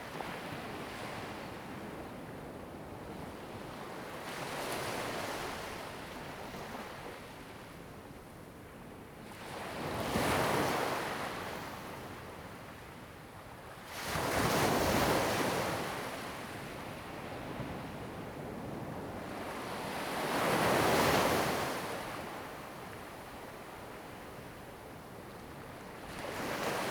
Imowzod, Ponso no Tao - In the beach
sound of the waves, In the beach
Zoom H2n MS +XY
Lanyu Township, Taitung County, Taiwan, 29 October 2014, 17:04